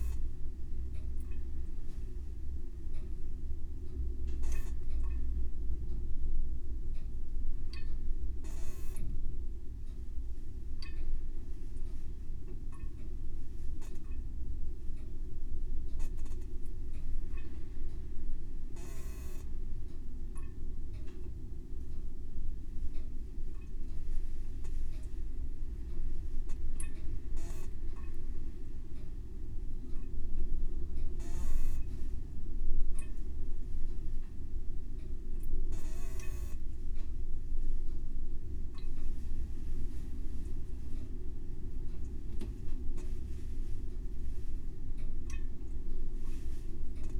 water filter in 3 parts - water filter part 2
Part 2 This is a 2 hour 30 min recording in 3 parts.
The water filter is protagonist with squealing tight throat to lush fat, sonority, while the ensemble ebbs and flows in this rich, bizarre improvisation: the grandfather clock measures; the pressure cooker hisses and sighs; the wind gathers pace to gust and rage; vehicles pass with heavy vibration; the Dunnock attempts song from the rambling rose; the thermostat triggers the freezer’s hum; children burst free to the playground; a boy-racer fancies his speed; rain lashes and funnels from the roof; a plastic bag taunts from its peg on the line, as the wind continues to wuther.
Capturing and filtering rain water for drinking is an improvement on the quality of tap water.
East of England, England, United Kingdom, 31 March 2022